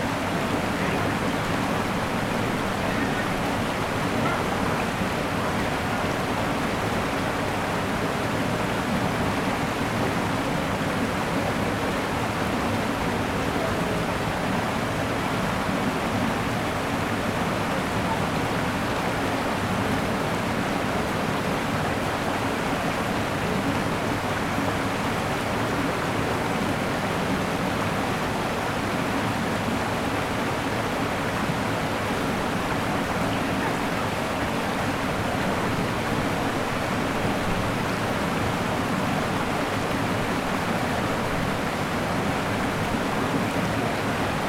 Chem. des Teppes, Aix-les-Bains, France - cascade du Sierroz
Sous le Pont Noir, pont ferroviaire qui enjambe le Sierroz au débit très moyen en cette saison.
Auvergne-Rhône-Alpes, France métropolitaine, France, July 2022